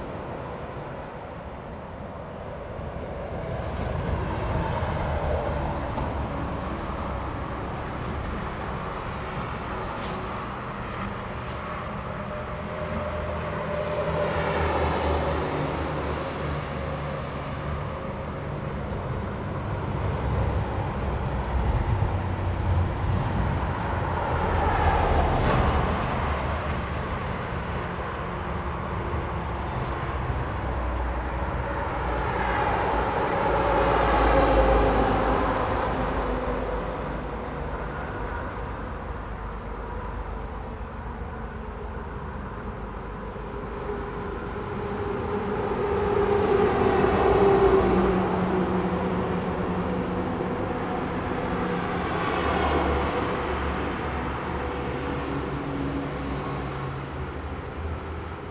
太平市民活動中心, Linkou Dist., New Taipei City - Traffic sound
highway, Traffic sound
Zoom H2n MS+XY